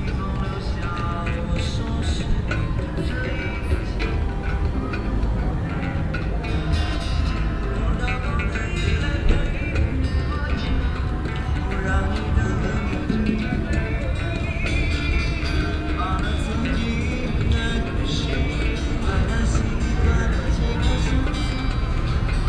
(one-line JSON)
{"title": "beijing, huan jin, vergnügungsviertel", "description": "beijing cityscape - night atmosphere at hun jin, lakeside touristic funpark, with live music bars playing music parallel all outside - place maybe not located correctly -please inform me if so\nproject: social ambiences/ listen to the people - in & outdoor nearfield recordings", "latitude": "39.92", "longitude": "116.38", "altitude": "52", "timezone": "GMT+1"}